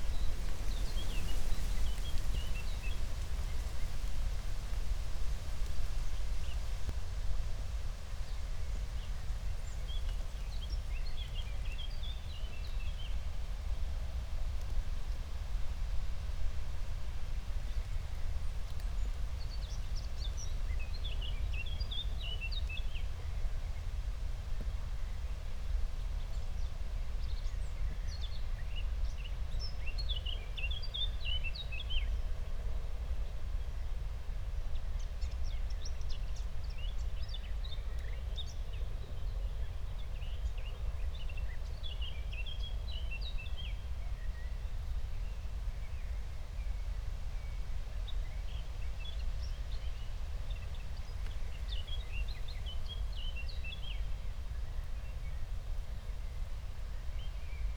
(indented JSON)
{
  "title": "Berlin, Buch, Mittelbruch / Torfstich - wetland, nature reserve",
  "date": "2020-06-19 15:00:00",
  "description": "15:00 Berlin, Buch, Mittelbruch / Torfstich 1",
  "latitude": "52.65",
  "longitude": "13.50",
  "altitude": "55",
  "timezone": "Europe/Berlin"
}